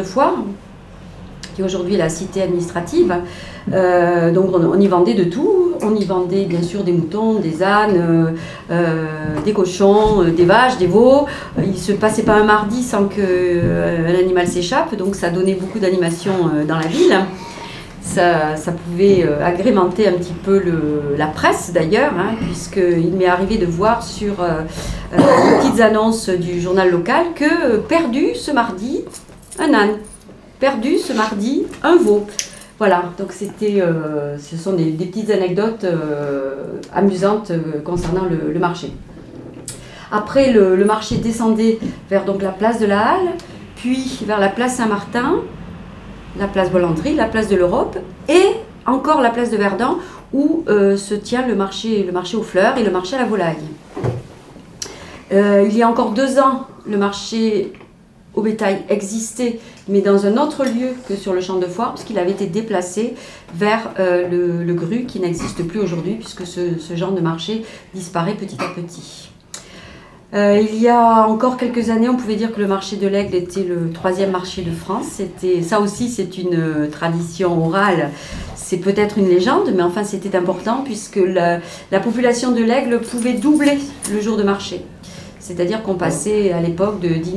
L'Aigle, France - Histoire du marché de l'Aigle
Histoire du marché de l'Aigle racontée au Cafisol.